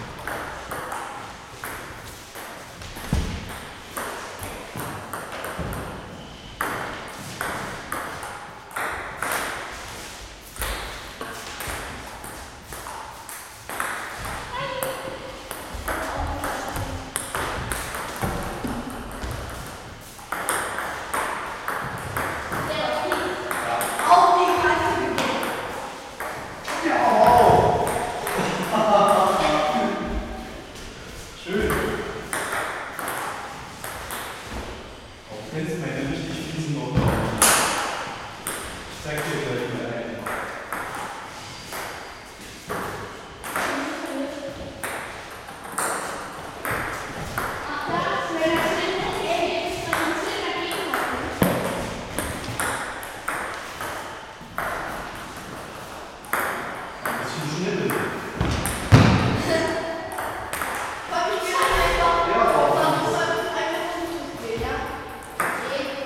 kinder spielen tischtennis im saal des kinder- und jugendzentrums big palais.